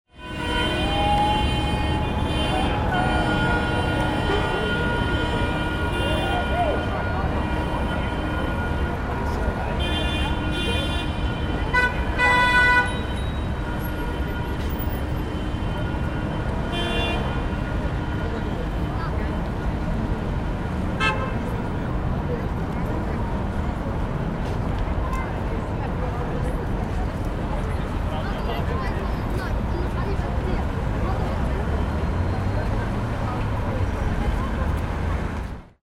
Arc de Triomphe, Paris
Traffic, car horns, people
Paris, France, 24 July 2010